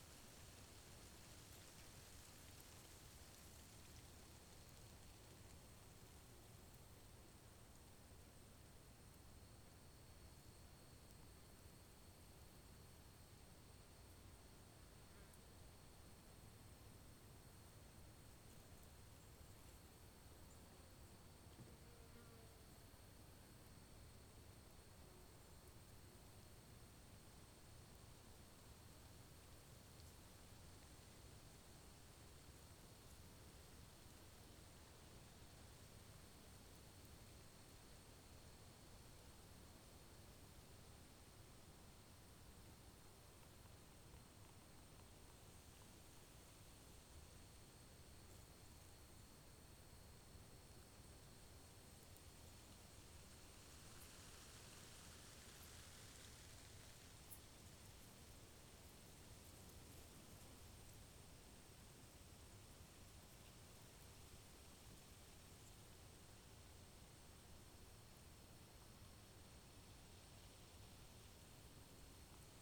The sounds of a sunny afternoon in the Chengwatana State Forest
Minnesota, United States, August 2022